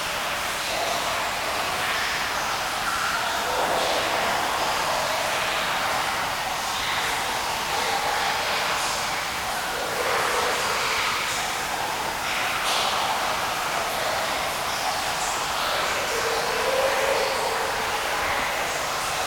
Audun-le-Tiche, France - The inclined hopper
In an undeground mine, an inclined hopper. There's 4 meters deep water and there's a deep mine ambience.
21 November 2015